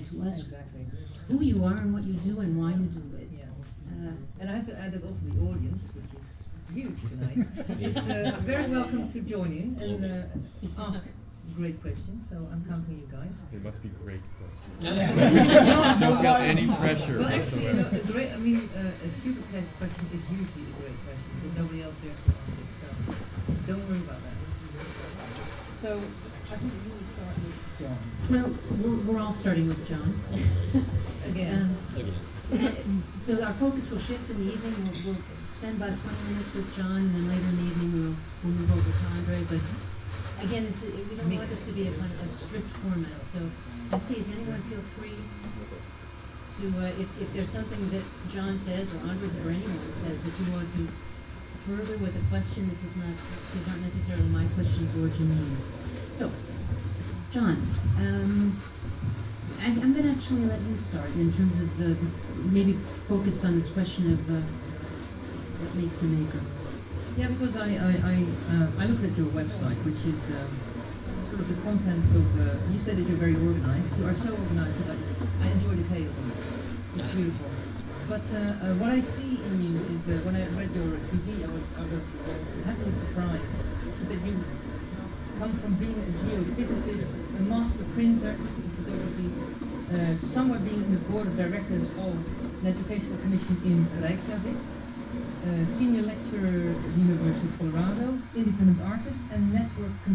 neoscenes: killertv fragment
A short fragment from the premiere episode of KillerTV with the Waag Society in their new pakhuis de Zwijger studio
Amsterdam, The Netherlands, 14 March, ~13:00